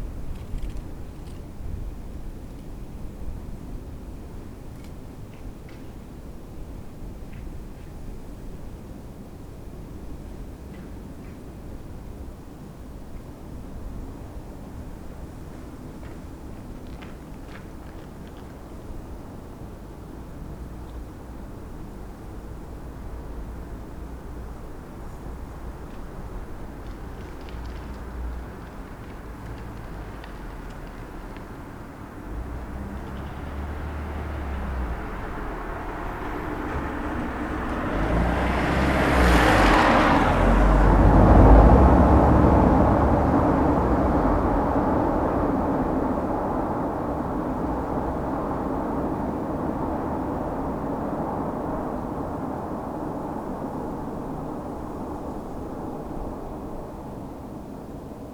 Berlin: Vermessungspunkt Friedelstraße / Maybachufer - Klangvermessung Kreuzkölln ::: 31.01.2012 ::: 02:15
Berlin, Germany, 31 January 2012, 02:15